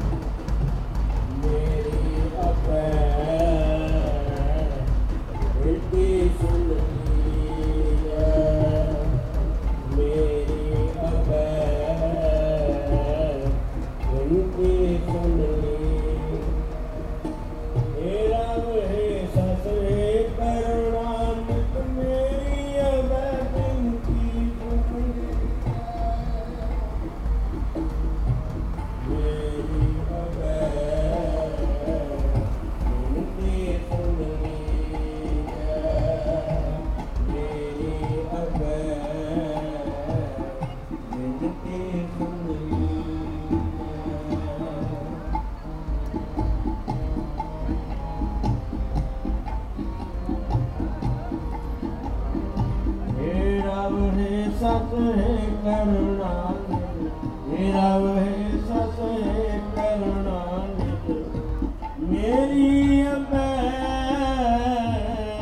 Reading, UK - Nagar Kirtan
This is the sound of the Nagar Kirtan celebrations. In Punjabi this means "town hymn singing" and this celebration is one of the ways in which the Sikh community mark the start of their new year.
10 May